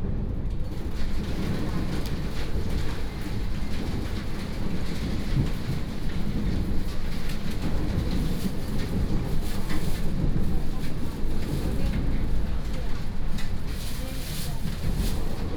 Train compartment, Outside the car is under thunderstorm
18 July 2016, 12:56